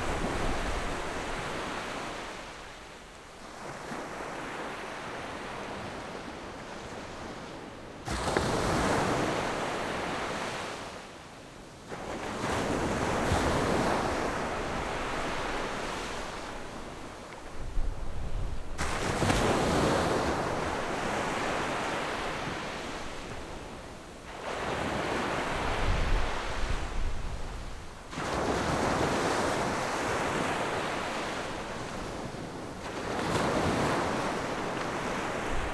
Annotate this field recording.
At the beach, Windy, Sound of the waves, Zoom H6+Rode NT4